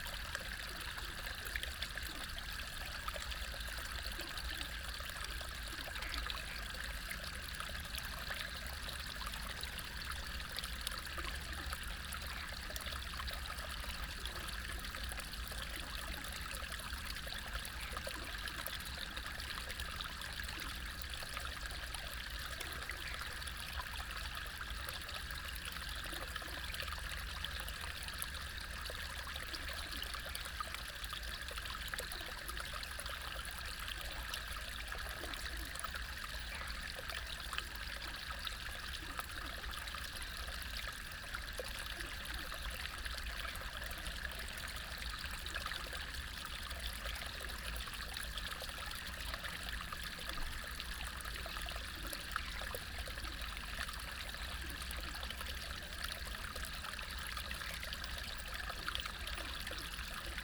Paper Dome, 桃米里 Nantou County - Flow sound
Frogs chirping, Flow sound, Insects called
Puli Township, 桃米巷52-12號, 18 April 2016, ~21:00